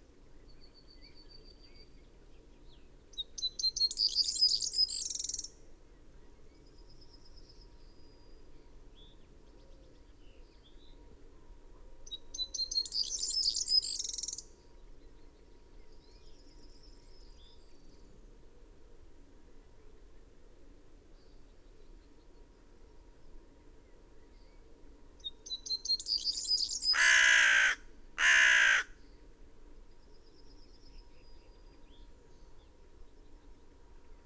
Malton, UK - temporary neighbours ...
temporary neighbours ... corn bunting and a crow ... dpa 4060s in parabolic to mixpre3 ... bird song ... calls ... from ... yellowhammer ... linnet ... wood pigeon ... blue tit ... blackbird ... pheasant ... background noise ...
England, United Kingdom